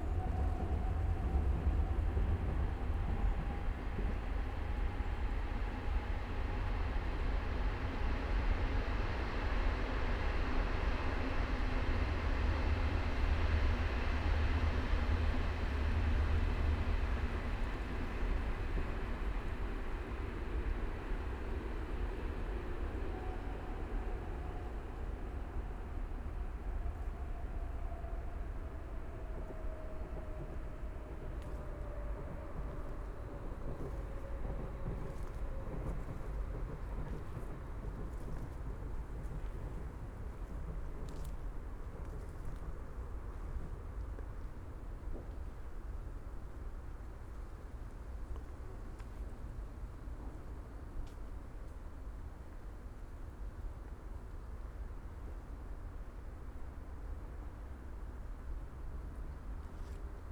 Berlin, Friedhof Baumschulenweg, cemetery, ambience on an Winter Sunday early afternoon
(Sony PCM D50, DPA4060)
Berlin, Germany, 30 December 2018